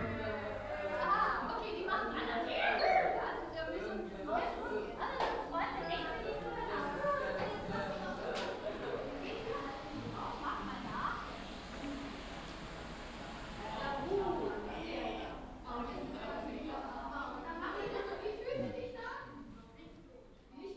stuttgart, old castle, landesmuseum
inside the old castle museum - here an exhibition for kids
soundmap d - social ambiences and topographic field recordings
Stuttgart, Germany, 20 June 2010, ~15:00